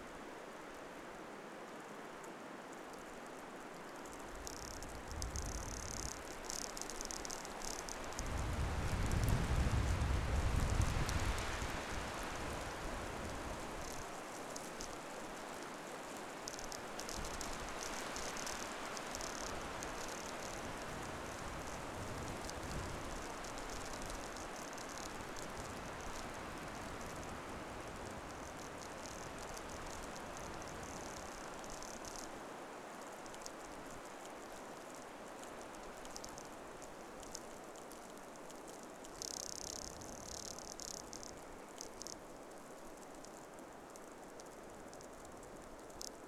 Utena, Lithuania - birch bark
stalking through the frozen march I encountered some strange flipping-flopping sound. After short investigation I discovered that it is produced by half torn-off birch bark rapidly waving in the blizzard
19 February 2012